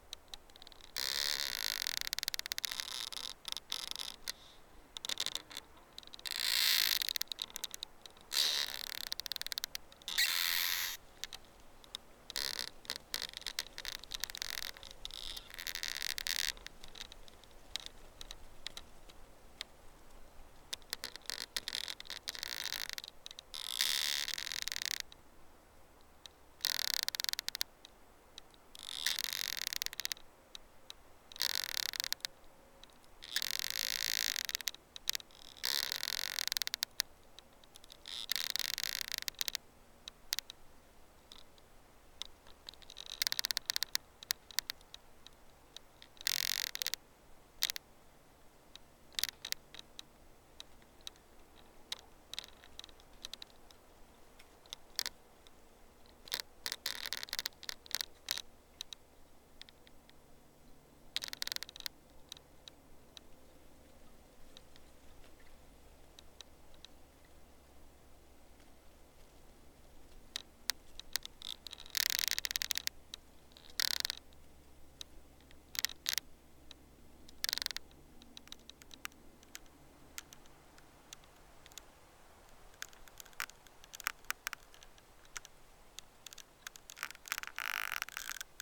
Vilnius, Lithuania, communal gardens - Creaking Tree
A beautiful sunny autumn day, close to Vilnius. Little forest close to the field. I went mushroom picking, but found only this creaking tree.
I have used a Zoom H5 recorder with stereo microphone and one piezo microphone.